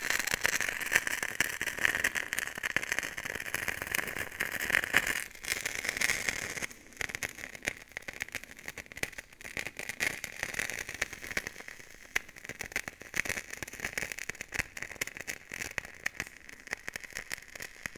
Saint-Georges-d'Hurtières, France - Old candle
Into the Saint-Georges d'Hurtières mine, there's a grave. It's the Emile Skarka memorial, a speleologist who went a lot in the Savoy underground mines with Robert Durand. We give an homage to this person and we light the very very old candle.
7 June 2017